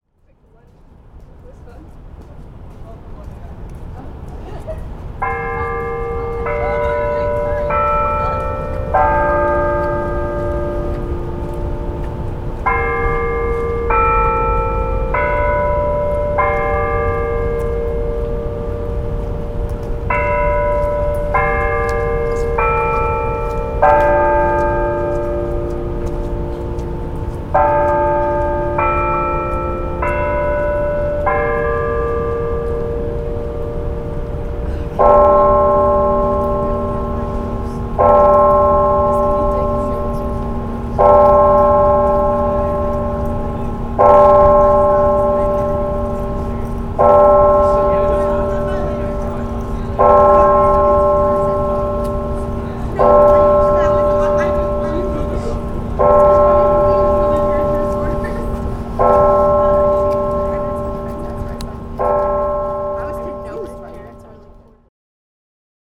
Muhlenberg College, West Chew Street, Allentown, PA, USA - Haas Bell Tower Outside of Egner Chapel
The 10AM bells ring from the Haas building. They can be heard outside the Egner Memorial Chapel during finals week at Muhlenberg College.
10 December 2014, 09:59